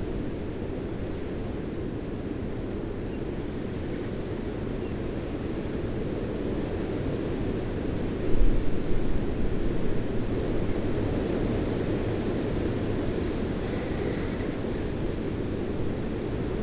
Luttons, UK - Humpback tree ...
Branches rubbing and creaking in a gale ... lavalier mics in a parabolic ...
Malton, UK, 29 January